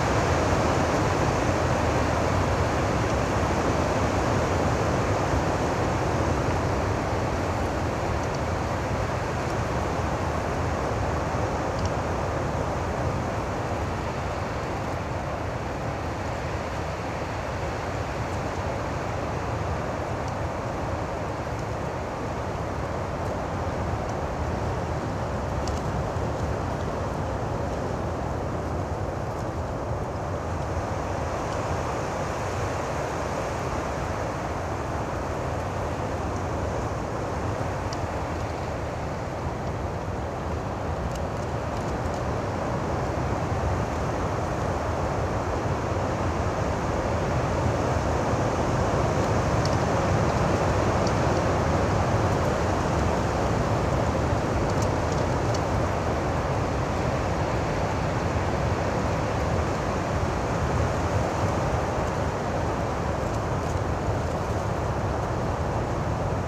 Viskenai, Lithuania, wind in abandoned cemetery
old abandoned cemetery. nothing left, just fallen wooden cross and walls buit of stones. very strong wind
Utenos apskritis, Lietuva, April 2020